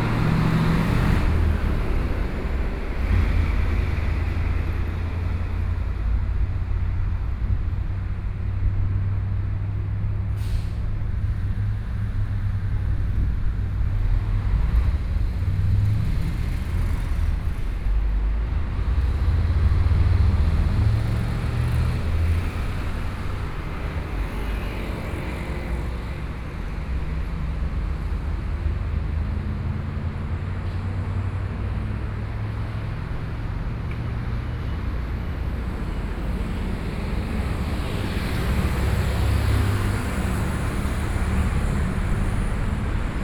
Cuihua Rd., Zuoying Dist. - Traffic Sound
The main road, Traffic Sound